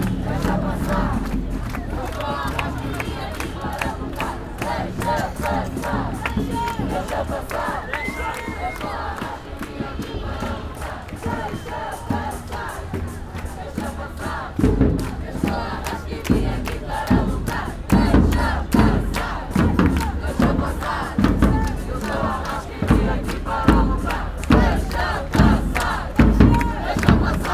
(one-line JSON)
{"title": "Av. Liberdade, Lisbon, manif rasca", "date": "2011-03-12 15:52:00", "description": "Manifestation against the Portuguese politicians class, the government, protest, music, anger, joy, people yelling, drums, horns", "latitude": "38.72", "longitude": "-9.15", "altitude": "72", "timezone": "Europe/Lisbon"}